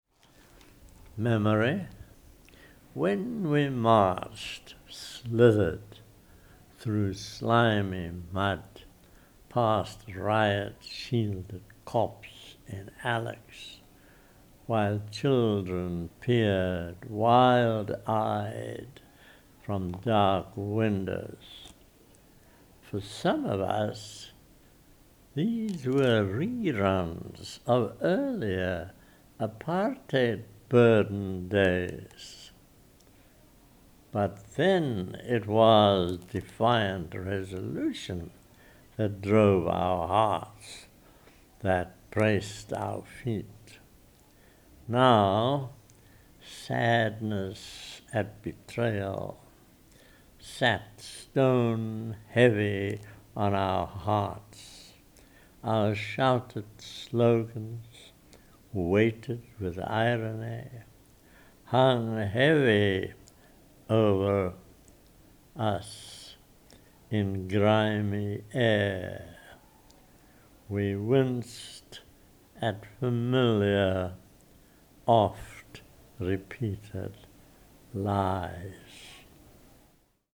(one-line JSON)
{"title": "University of Kwa-ZuluNatal, CCS, South Africa - Dennis Brutus, when we marched through Alex...", "date": "2009-02-05 14:23:00", "description": "Dennis recites “Memory”, a poem picturing a march through Alexandra in 2004. This is the poem we then continue talking about in our conversation…\nThe poem and further clips from the recording with Dennis are included in remix in the radio play LONG WALK 2009. A playlist of clips from the radio play is archived here:", "latitude": "-29.87", "longitude": "30.98", "altitude": "145", "timezone": "Africa/Johannesburg"}